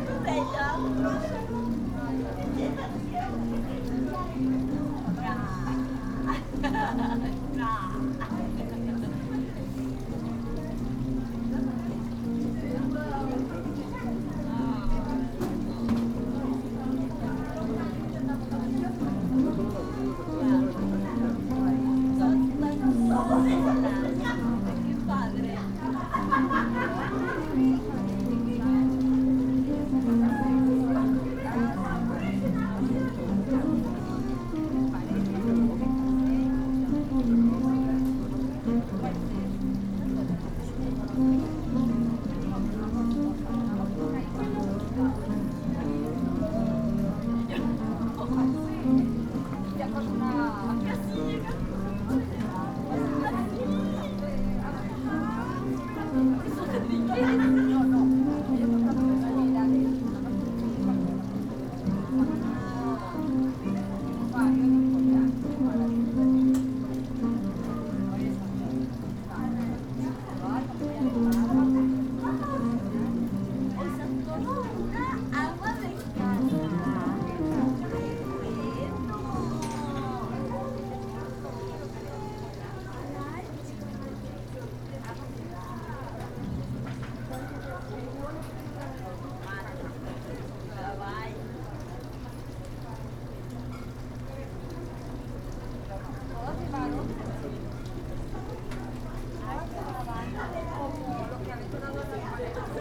Eugenio Garza Sada, Cumbres del Campestre, León, Gto., Mexico - En la terraza de la cafetería PanPhila.
On the terrace of PanPhila coffee shop.
I made this recording on july 28th, 2022, at 7:16 p.m.
I used a Tascam DR-05X with its built-in microphones and a Tascam WS-11 windshield.
Original Recording:
Type: Stereo
Esta grabación la hice el 28 de julio 2022 a las 19:16 horas.
Guanajuato, México